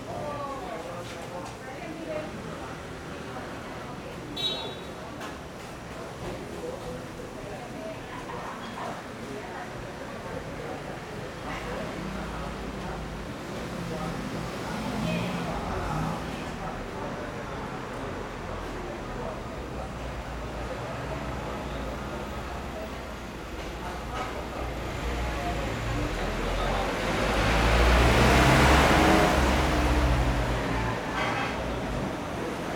成功市場, Sanchong Dist., New Taipei City - In the market
walking In the market, Traffic Sound
Zoom H4n +Rode NT4
New Taipei City, Taiwan, 13 February 2012